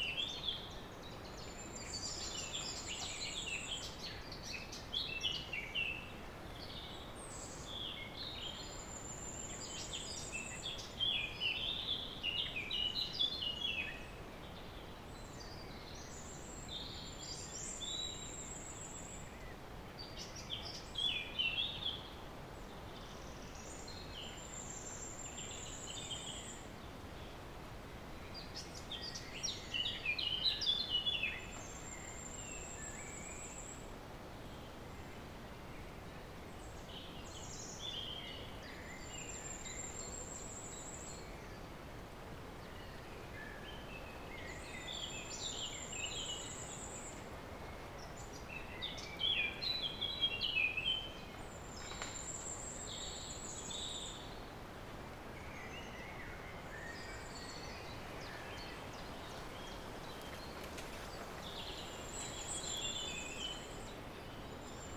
Vallée des Traouiero, Trégastel, France - Evening birds in the valley [Valley Traouïero]
Début de soirée. Le chant des oiseaux résonnent dans la clarière de la vallée.
Early evening. The birds singing resound in the clearing of the valley.
April 2019.
22 April 2019, 19:32